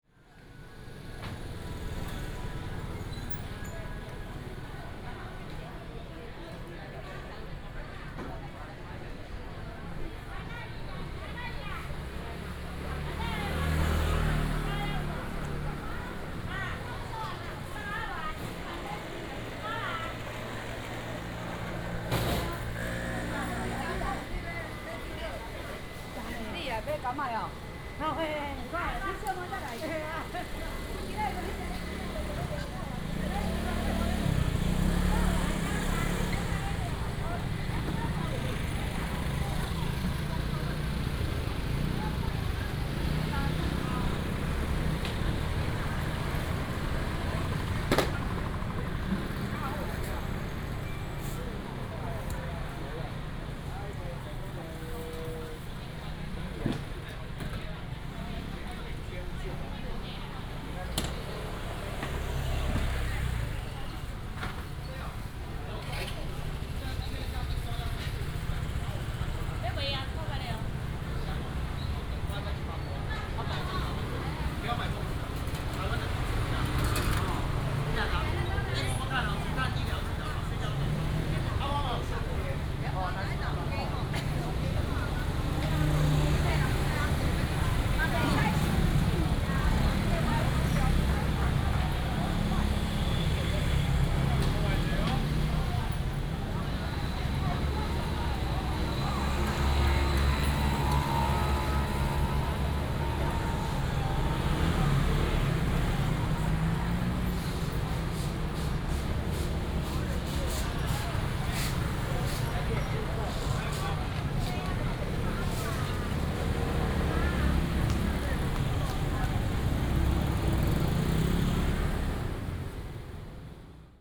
Caishi St., Changhua City - walking in the Street
Walking in the market, walking in the Street
Changhua County, Taiwan, 19 January 2017